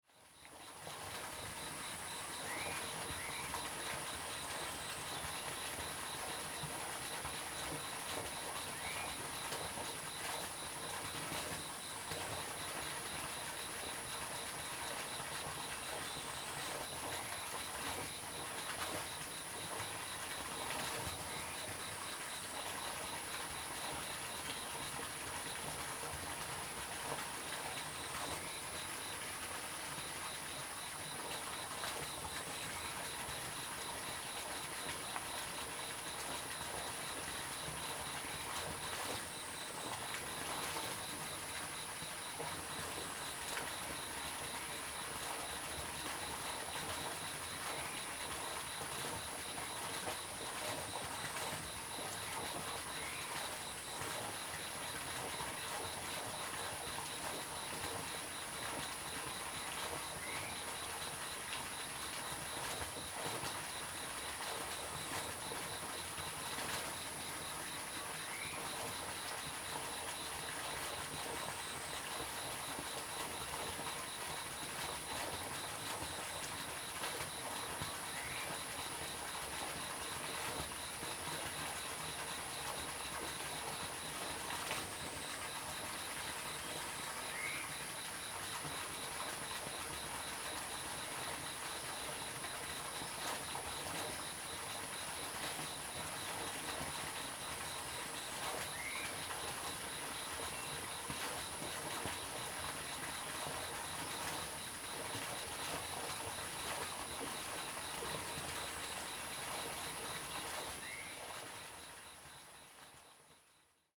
中路坑生態園區, 埔里鎮桃米里 - Next to the pool
Next to the pool, Bird calls
Zoom H2n MS+XY
Nantou County, Taiwan, 10 June 2015, 06:51